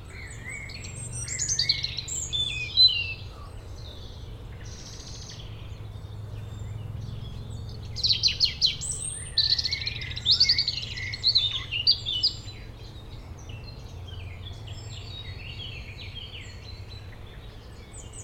Chemin des Tigneux, Chindrieux, France - Dans les bois
Chemin pédestre dans la forêt au dessus de Chindrieux, chants de rouge-gorge, merles, bruits de la vallée le clocher sonne 17h.
France métropolitaine, France, April 2022